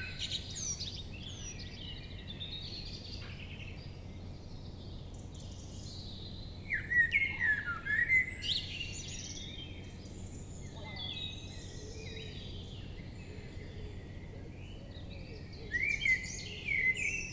Atlantic Pond, Ballintemple, Cork, Ireland - Dawn Chorus w/ Prominent Blackbird
Recorder placed on a tiny tripod in the grass facing east. Cloudy with very little wind, before dawn. I attempted to catch the reverb from the clearing in the trees. I like how prominent the blackbird ended up to the right of the stereo.